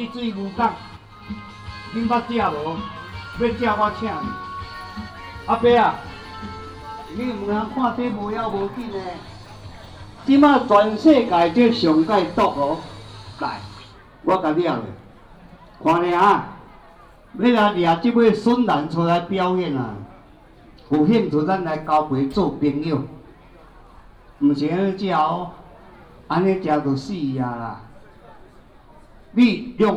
白沙屯, 苗栗縣通霄鎮 - promoted products
Temporary marketplace, promoted products